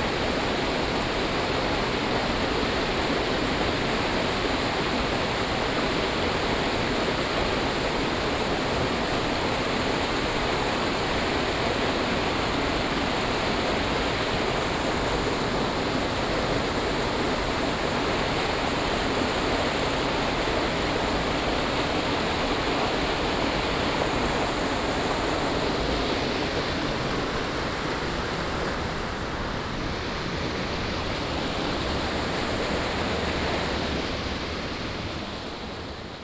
玉門關, 埔里鎮成功里, Nantou County - Stream sound
Stream sound
Binaural recordings
Sony PCM D100+ Soundman OKM II